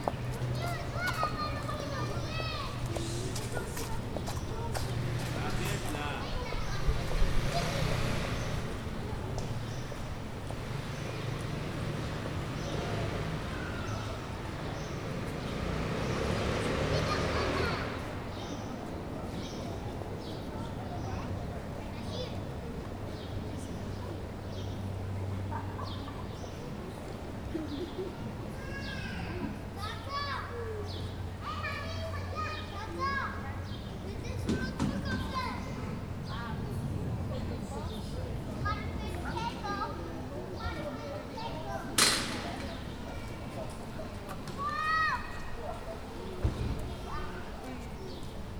Impasse des Boucheries, Saint-Denis, France - Park opposite Centre Municipal de Santé Cygne
25 May